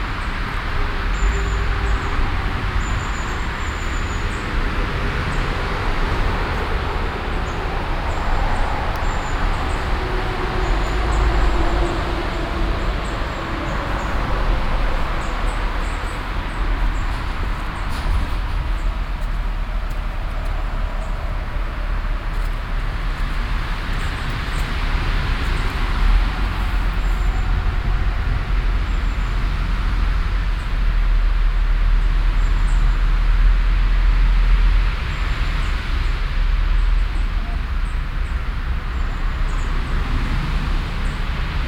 essen, emscherstraße, unter autobahnbrücke
Verkehrsgeräusche der Autobahn an Brücke über Zufahrtsstrasse zur Schurenbachhalde, vormittags
Projekt - Stadtklang//: Hörorte - topographic field recordings and social ambiences